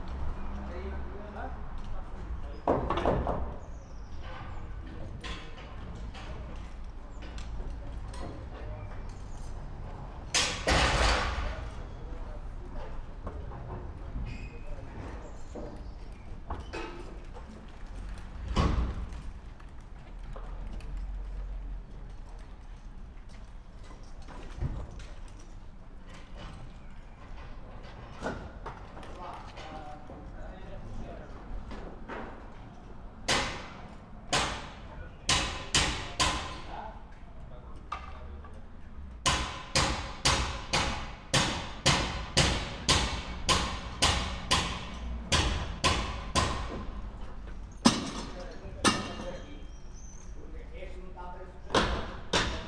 St.Joseph church, Esch-sur-Alzette, Luxemburg - construction work
construction work opposite of St.Joseph church, Esch-sur-Alzette
(Sony PCM D50, Primo EM272)
10 May, 11:35